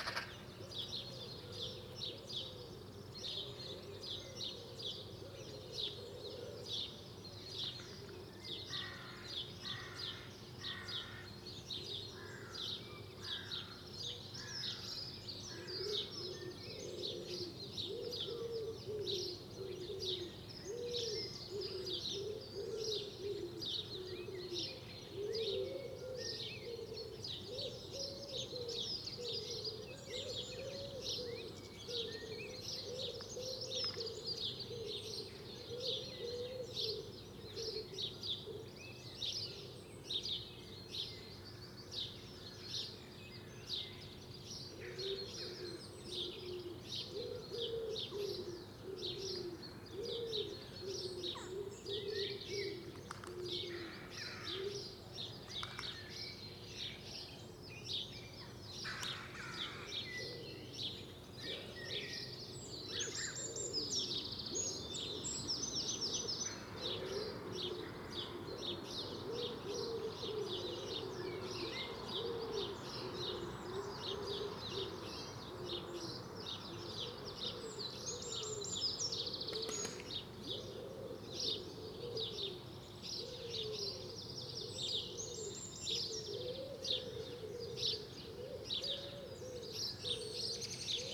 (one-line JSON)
{"title": "Contención Island Day 76 outer north - Walking to the sounds of Contención Island Day 76 Sunday March 21st", "date": "2021-03-21 05:51:00", "description": "The Poplars High Street St Nicholas Avenue\nThe dawn slowly lightens\ngrass and detritus\nsaturday night revelry\nTo a quieting of sparrow cheep\nmagpie crows gulls\ndistant blackbird’s song\nWood pigeon’s\nundulating flight\nwith one early-spring wing clap", "latitude": "55.01", "longitude": "-1.62", "altitude": "63", "timezone": "Europe/London"}